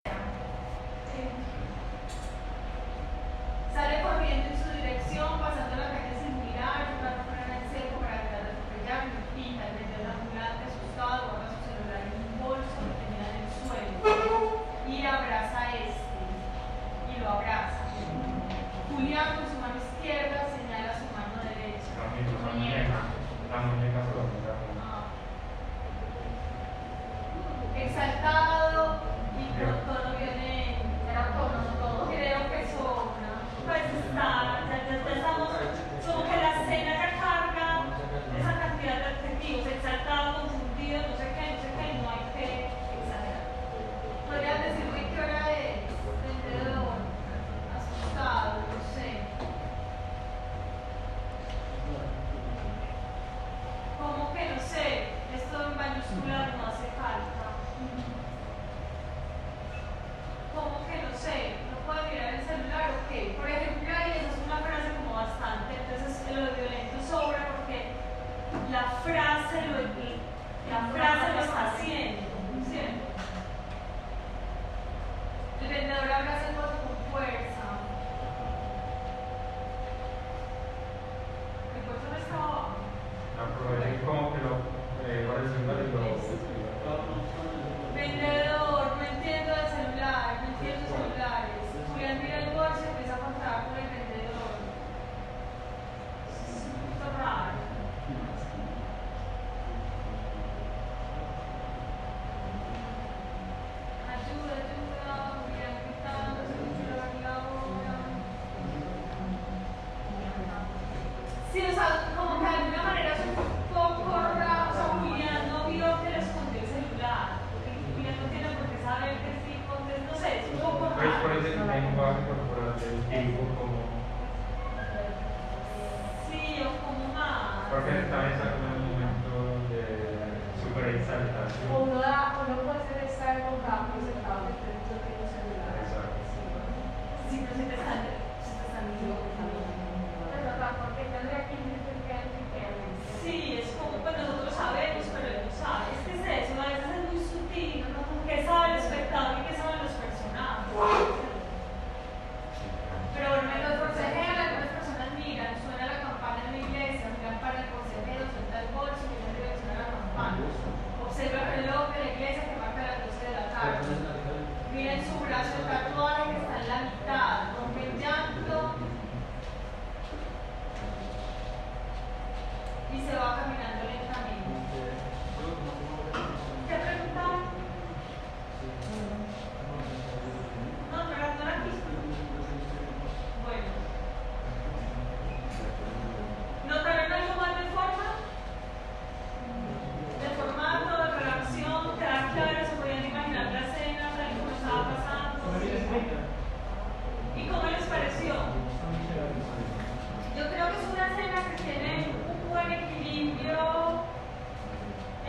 Cra., Medellín, Antioquia, Colombia - Clase de Guión
Descripción
Sonido tónico: Gente hablando, aire acondicionado
Señal sonora: Sillas corriéndose
Micrófono dinámico (Celular)
Altura 95 cm
Duración 3:25
Grabado por Luis Miguel Henao y Daniel Zuluaga